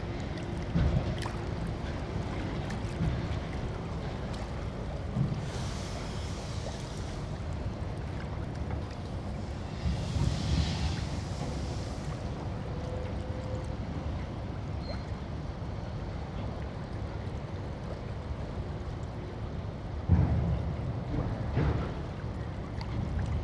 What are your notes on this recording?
Cranes unloading gravel from ship. splashing water. Sony MS mic. Binckhorst Mapping Project